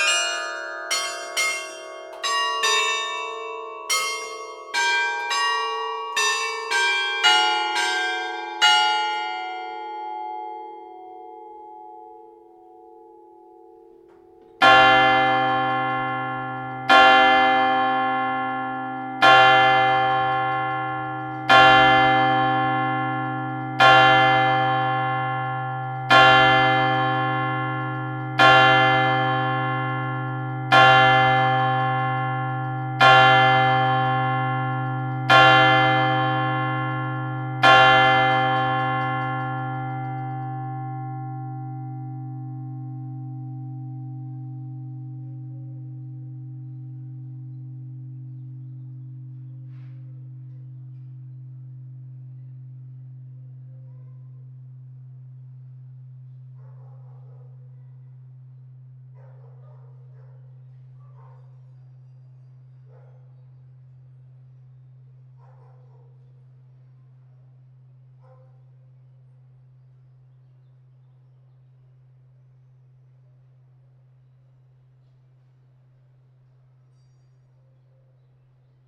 Pl. des Héros, Arras, France - Carillon - Beffroi - Arras
Arras (Pas-de-Calais)
Carillon du beffroi d'Arras - Ritournelles automatisées
l'heure - le quart-d'heure - la demi-heure - les trois-quart-d'heure